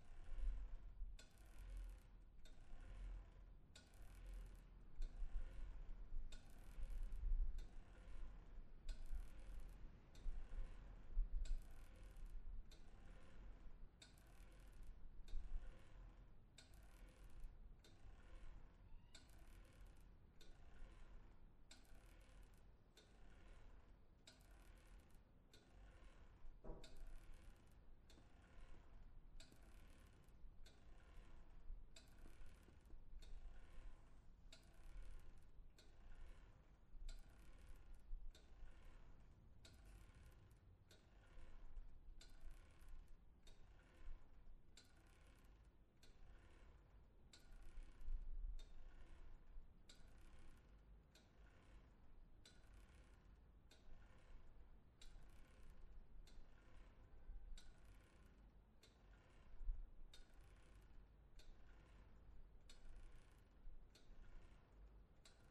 ratingen, kirche peter + paul, dicke märch
aufnahme im glockenturm miitags, glockenläuten der dicken märch (glockennmame), beginn mit kettenantrieb der glocke
- soundmap nrw
project: social ambiences/ listen to the people - in & outdoor nearfield recordings
marktplatz, katholische kirche peter + paul